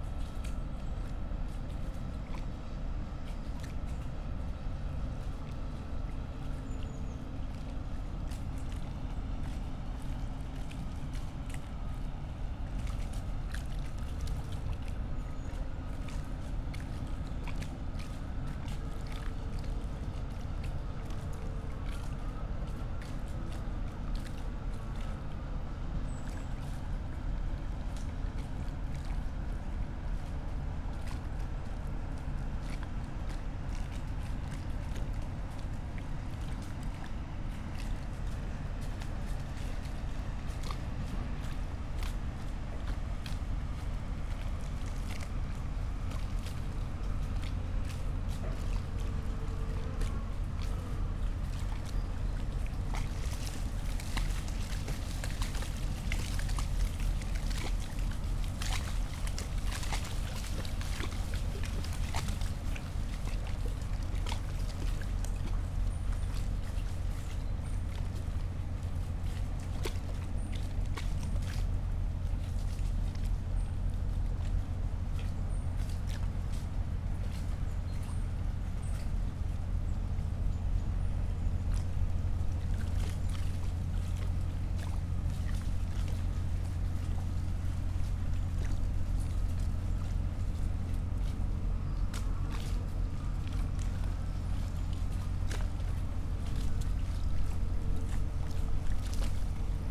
place revisited, ambience on a rather warm autumn Saturday around noon, cement factory at work, boats passing, waves.
(SD702, DPA4060)
Berlin, Germany